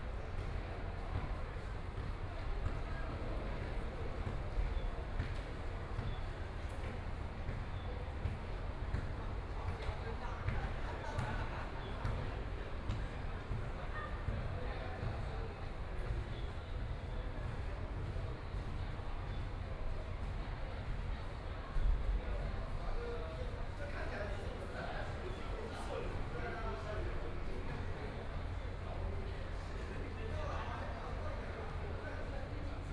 The Affiliated Senior High School of National Taiwan Normal University - Class time
Class time, Binaural recordings, Zoom H4n+ Soundman OKM II